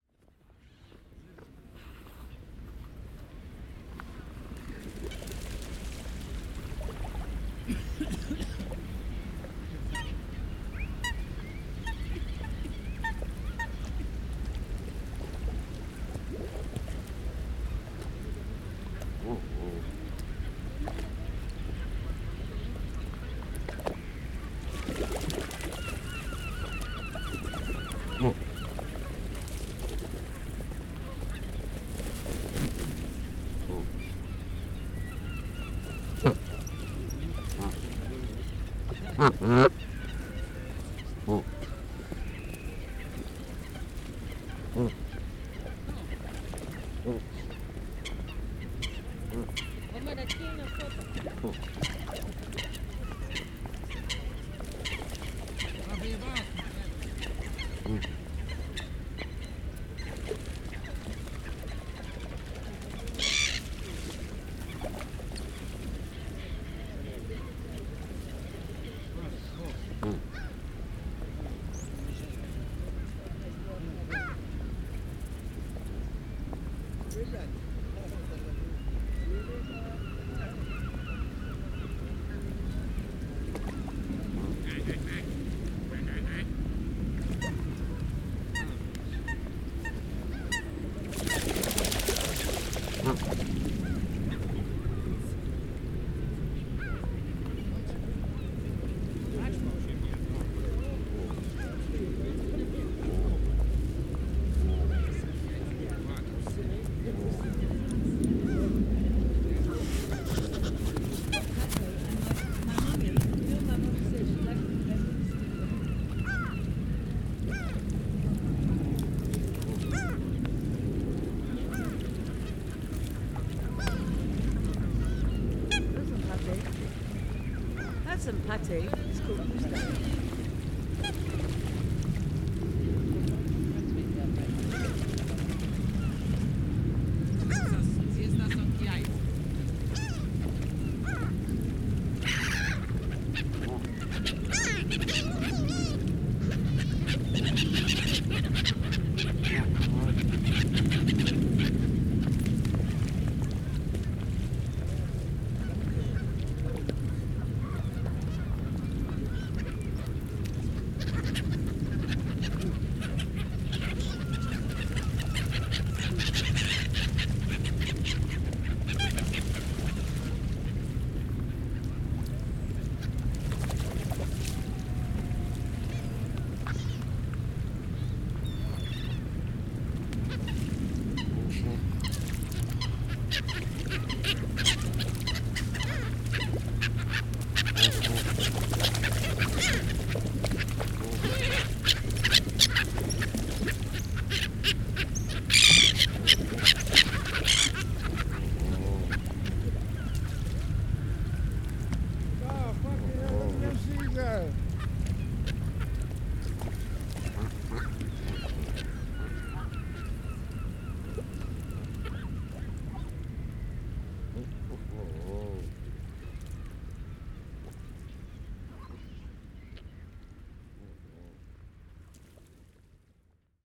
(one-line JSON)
{"title": "Burgess Park, Picton St, London, Greater London, UK - Life by the Burgess Pond", "date": "2013-01-20 13:30:00", "description": "Stereo recording with a Zoom h4n by the Burgess Pond during snowy conditions.", "latitude": "51.49", "longitude": "-0.08", "altitude": "2", "timezone": "Europe/London"}